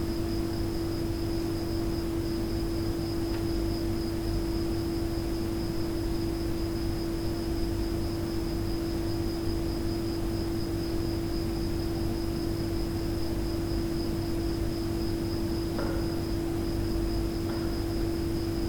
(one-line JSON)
{"title": "Plaça Mercat, La Pobla Llarga, Valencia, España - Un grillo campestre y animales nocturnos una noche de verano", "date": "2020-08-13 02:26:00", "description": "Noche en La Pobla Llarga acompañada del sonido de un grillo. Los cantos de los grillos solo los hacen los machos y los producen frotando sus élitros (alas anteriores), por su sonido creo que es un grillo campestre [Gryllus Campestris]. Se capta también el sonido de un murciélago a partir del minuto 1:34 aproximadamente. También se escucha a un perrillo en una terraza que mueve algunos objetos que reverberan un poco en las paredes. Y como buena noche calurosa de verano... también se escuchan las tecnologías humanas; algún coche pasar y el motor de un aire acondicionado.\nAnimales nocturnos en un paisaje sonoro de pueblecito humano.", "latitude": "39.09", "longitude": "-0.48", "altitude": "37", "timezone": "Europe/Madrid"}